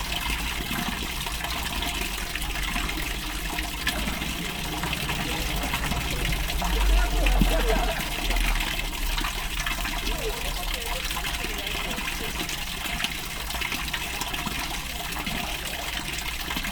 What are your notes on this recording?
A hidden drain close to the walking path of the street. First a continous flow of waste water then a sudden bigger wave. international city scapes - social ambiences and topographic field recordings